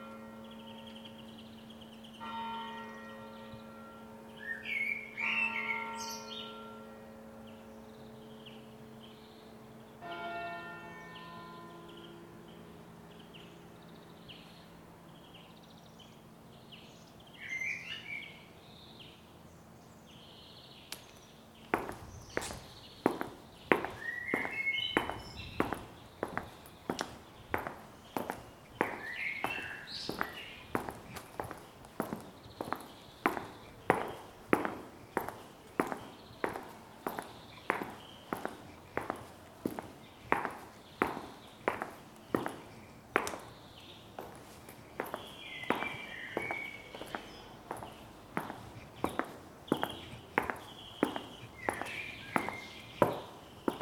Linzer G., Salzburg, Österreich - Sebastianfriedhof
6 March 2021